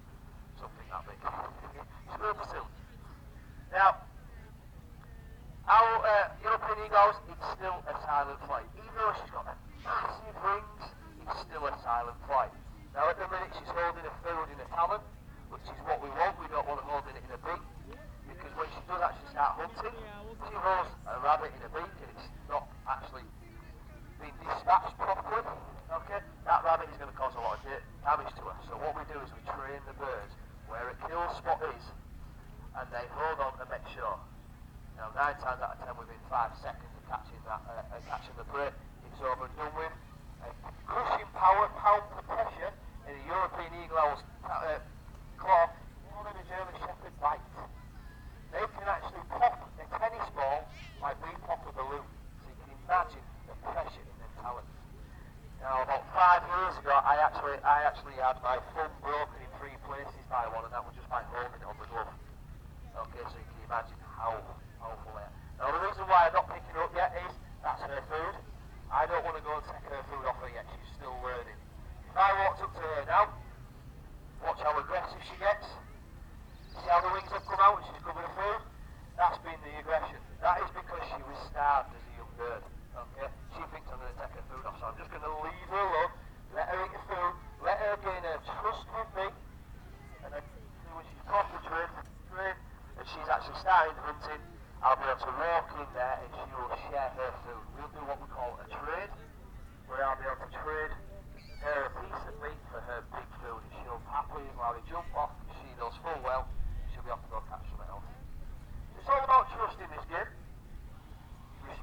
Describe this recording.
Apollo the eagle owl ... falconer with radio mic through the PA system ... lavalier mics clipped to baseball cap ... warm sunny morning ...